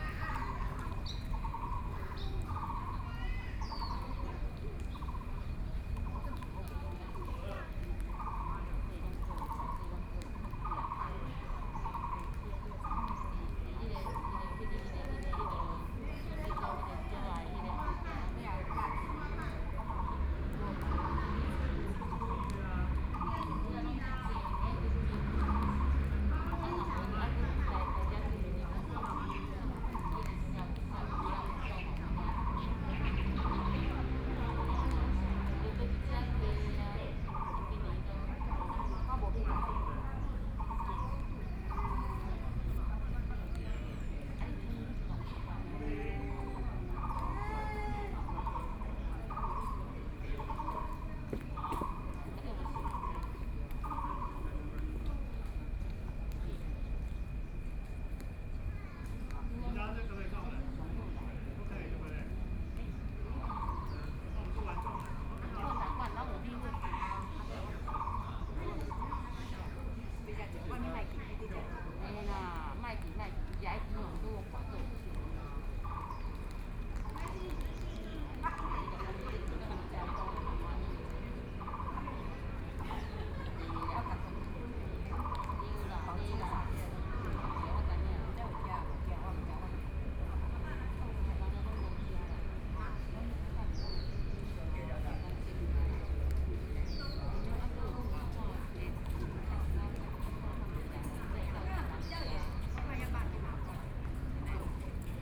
BiHu Park, Taipei City - in the Park
Elderly voice chat, Birdsong, Frogs sound, Aircraft flying through
Neihu District, Taipei City, Taiwan, 4 May 2014, 11:30